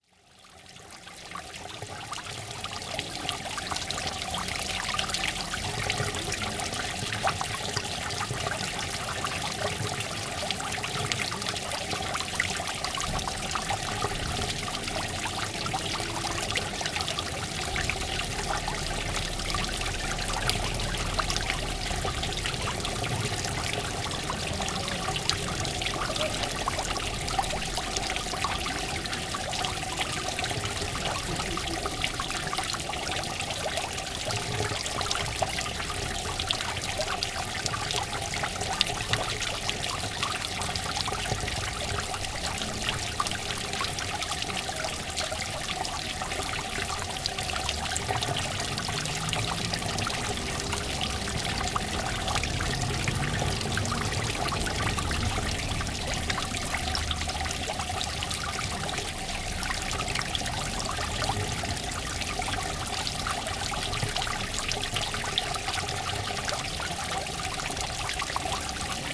teltow, marktplatz: brunnen - the city, the country & me: fountain
the city, the country & me: october 1, 2011
Teltow, Germany